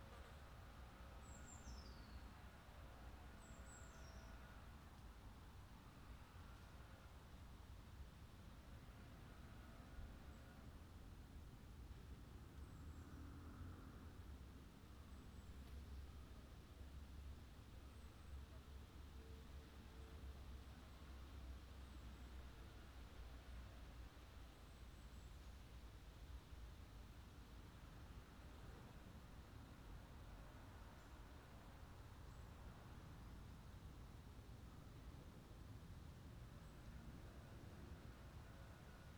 Park Sorghvliet, Den Haag, Nederland - Park Sorghvliet (1/2)
Binaural recording in Park Sorghvliet, The Hague. A park with a wall around it. But city sounds still come trough.
The Hague, The Netherlands, June 2014